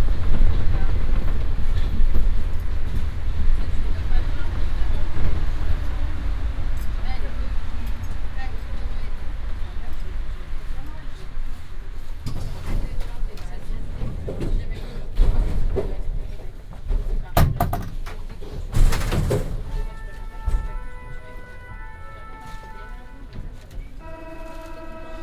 Binaural recording of a metro ride trip above ground with line 6 from Cambronne to Bir Hakeim.
Recorded with Soundman OKM on Sony PCM D100